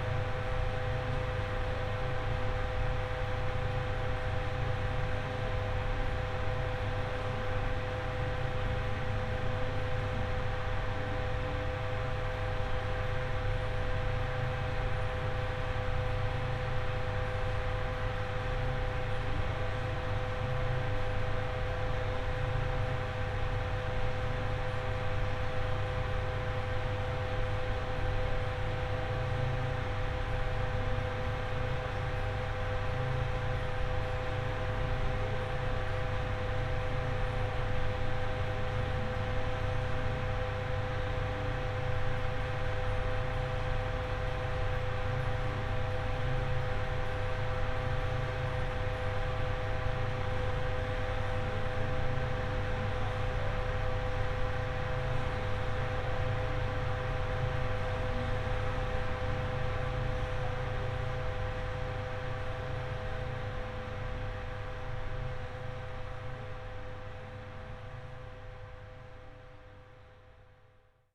workum, het zool: marina building - the city, the country & me: ventilation inside marina building
ventilation in the washroom of marina buidling, radio music
the city, the country & me: july 31, 2012
Workum, The Netherlands